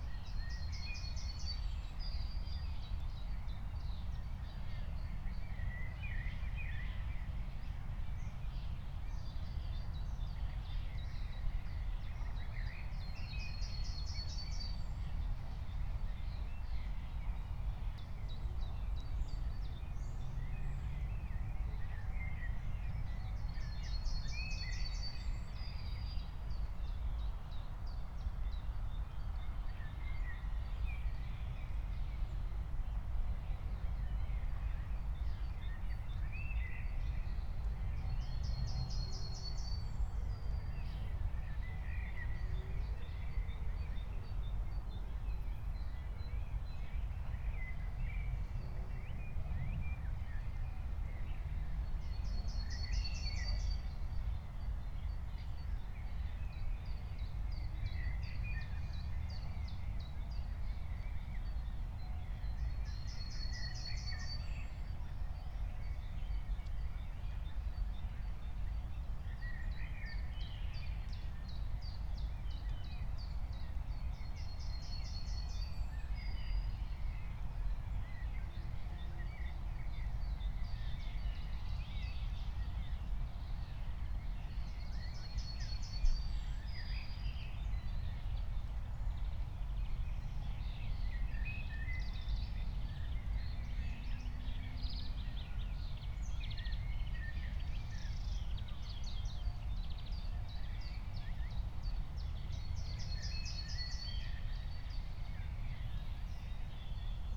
{"date": "2021-05-15 17:18:00", "description": "it started to rain, Reed warbler (Drosselrohsänger in german) singing\n17:18 Berlin, Buch, Mittelbruch / Torfstich 1 - pond, wetland ambience", "latitude": "52.65", "longitude": "13.50", "altitude": "57", "timezone": "Europe/Berlin"}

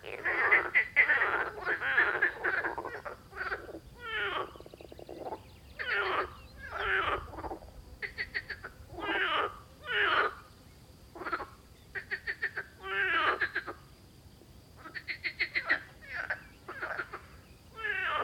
{"title": "Hartlweg, Münsing, Deutschland - Pond near Münsing - croaking marsh frogs", "date": "2011-05-10 09:01:00", "description": "Mating calls of marsh frogs (Pelophylax ridibundus), presumably. [I used the Hi-MD-recorder Sony MZ-NH900 with external microphone Beyerdynamic MCE 82]", "latitude": "47.90", "longitude": "11.36", "altitude": "661", "timezone": "Europe/Berlin"}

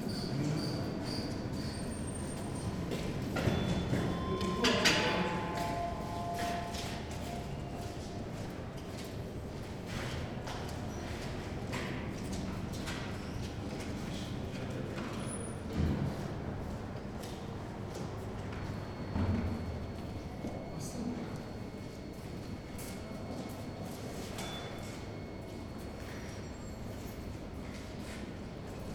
{"title": "berlin, urban hospital - elevator area", "date": "2010-01-11 14:45:00", "description": "berlin, urban hospital, ground level, 6 elevators, people moving, shuffling, steps", "latitude": "52.49", "longitude": "13.41", "altitude": "41", "timezone": "Europe/Berlin"}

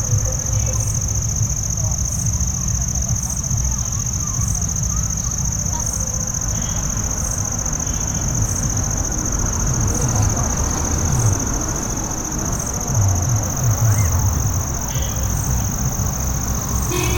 {"title": "Pachmarhi, Madhya Pradesh, Inde - Insects and music at night", "date": "2015-10-18 19:15:00", "latitude": "22.46", "longitude": "78.42", "altitude": "1071", "timezone": "Asia/Kolkata"}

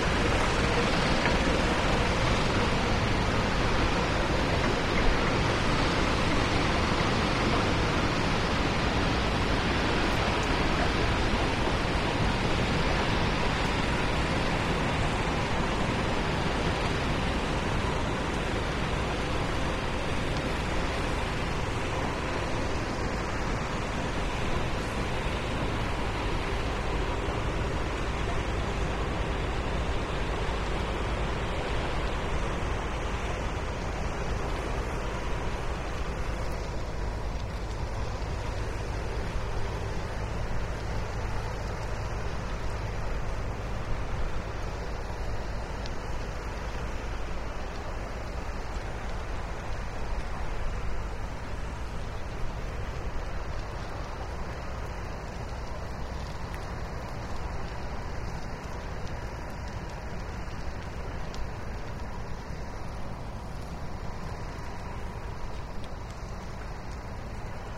{"title": "Rimini, stone pit at the river", "date": "2011-04-05 13:22:00", "description": "Following the process from stone to pebbles to sand alongside the Marecchia river. What is crushed by a huge lithoclast in the first place is fine grain at last.", "latitude": "44.07", "longitude": "12.51", "altitude": "12", "timezone": "Europe/Rome"}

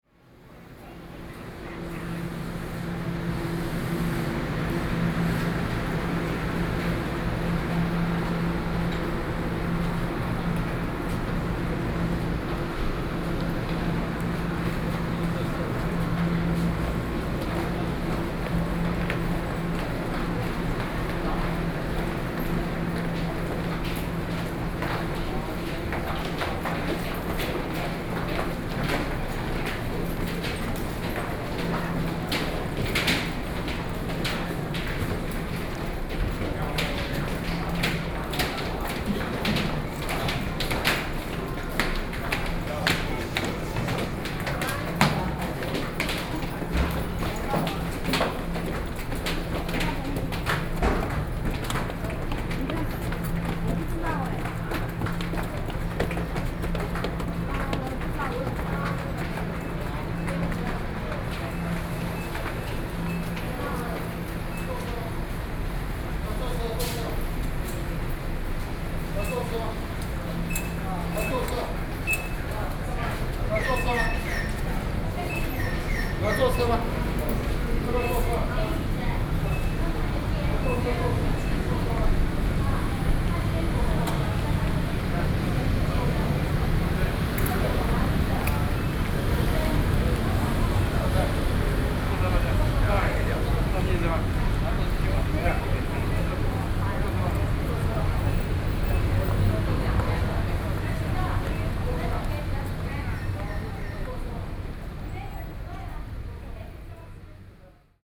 {"title": "Zhongli Station - soundwalk", "date": "2013-08-12 13:52:00", "description": "From the station platform out of the station through the underpasses, Sony PCM D50 + Soundman OKM II", "latitude": "24.95", "longitude": "121.23", "altitude": "138", "timezone": "Asia/Taipei"}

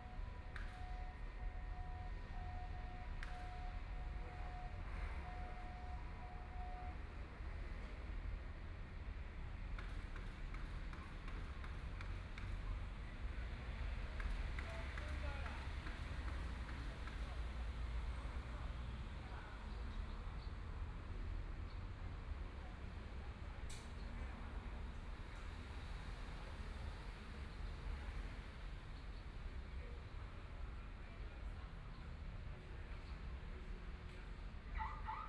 {"title": "扶輪公園, Hualien City - in the Park", "date": "2014-02-24 11:31:00", "description": "in the Park, Traffic Sound, Environmental sounds, Construction Sound\nPlease turn up the volume\nBinaural recordings, Zoom H4n+ Soundman OKM II", "latitude": "23.99", "longitude": "121.60", "timezone": "Asia/Taipei"}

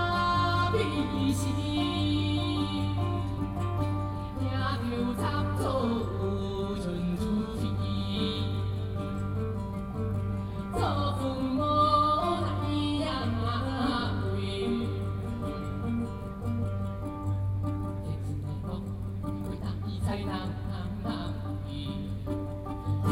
{"title": "Zhongzheng, Taipei City, Taiwan - No Nuke", "date": "2013-05-26 19:46:00", "description": "Protest, Hakka singer, Zoom H4n+ Soundman OKM II", "latitude": "25.04", "longitude": "121.52", "altitude": "20", "timezone": "Asia/Taipei"}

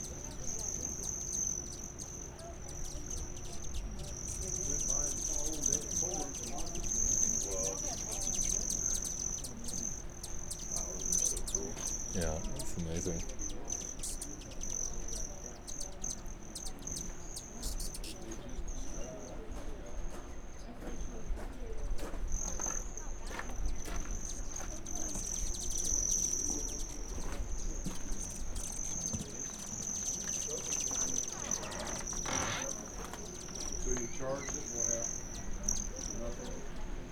{"title": "neoscenes: hummingbirds in St. Elmo", "latitude": "38.70", "longitude": "-106.35", "altitude": "3043", "timezone": "Australia/NSW"}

